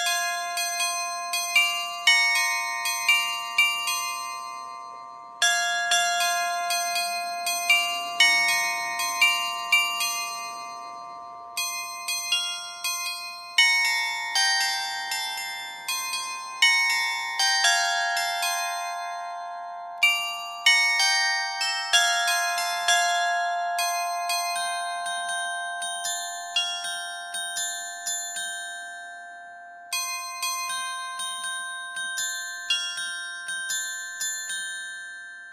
{"title": "Pl. de la Mairie, Aix-Noulette, France - carillon de Aix Noulettes", "date": "2020-06-18 14:00:00", "description": "Aix Noulettes (Pas-de-Calais)\nCarillon - place de la mairie\nSuite de ritournelles automatisées programmées depuis la mairie", "latitude": "50.43", "longitude": "2.71", "altitude": "73", "timezone": "Europe/Paris"}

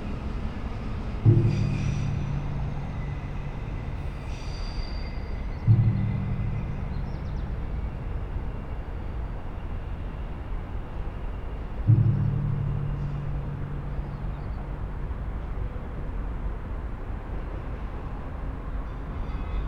{"title": "Kienlesbergstraße, Ulm, Deutschland - Kienlesberg Brücke Mallet Stick", "date": "2018-07-09 18:53:00", "description": "For an upcoming sound art project i recorded the new tram bridge (Kienlesberg Brücke) with mallet & Drum sticks. Recorded with 2 Lom Audio Usi Microphones in Spaced AB recording into a Sony M10", "latitude": "48.40", "longitude": "9.98", "altitude": "479", "timezone": "Europe/Berlin"}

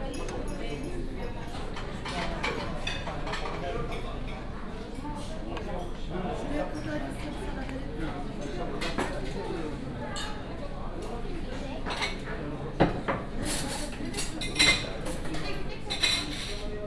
{"title": "Köln Mülheim, Keupstr. - Kilim restaurant", "date": "2016-08-15 19:33:00", "description": "Köln Mülheim, Keupstr., Kilim restaurant revisited, same dinner as always...\n(Sony PCM D50, OKM2)", "latitude": "50.96", "longitude": "7.01", "altitude": "51", "timezone": "Europe/Berlin"}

